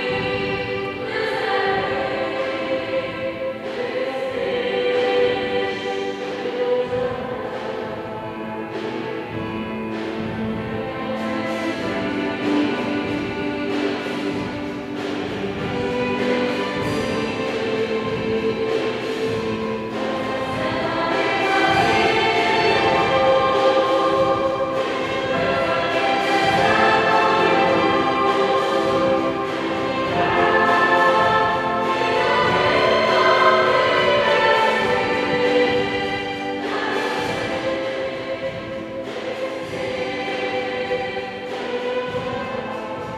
cathedral - gozo, malta
recorded inside the cathedral of gozo, malta - the song was explained to be a traditional maltese song - changed and popularized later by some american folk singers...
(this has been confirmed by other maltese friends! - tell me your story if you know?)
recorded dec. 2002
Victoria, Malta, 18 November 2009, 12:44